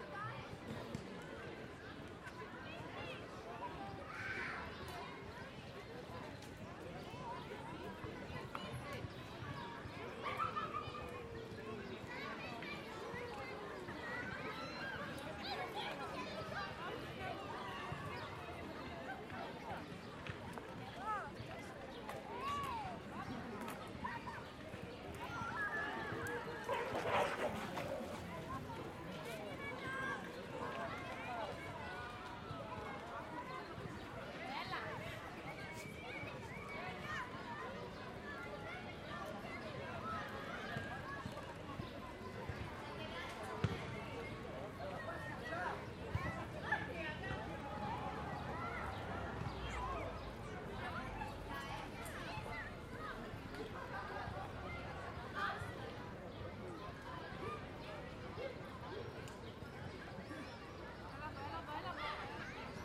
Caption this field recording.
Kids playing, people talking, distant, dog barking distant, car passes by.